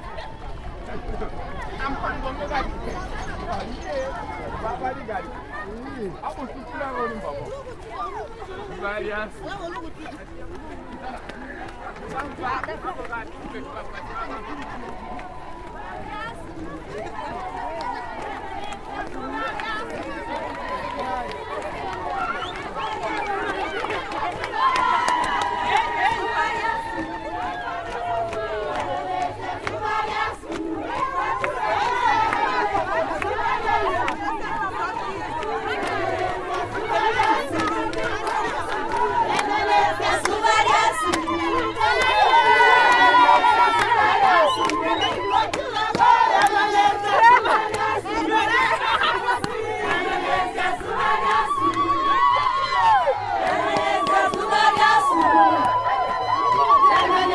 Urban Centre, Binga, Zimbabwe - Women's March to Freedom Square
recordings from the first public celebration of International Women’s Day at Binga’s urban centre convened by the Ministry of Women Affairs Zimbabwe